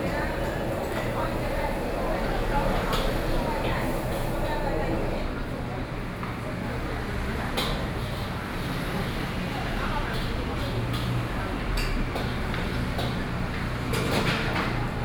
Sec., Zhongshan Rd., 羅東鎮東安里 - In the restaurant
In the restaurant, Traffic Sound